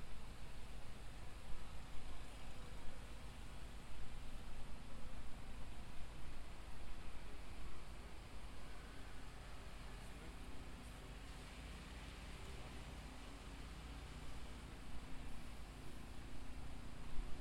район Чертаново Северное, Москва, Россия - In a park near the Chertanovskaya metro station
Sitting on a bench in a park near the Chertanovskaya metro station. A snow melting machine is working and crows can be heard.
Центральный федеральный округ, Россия, 8 January 2022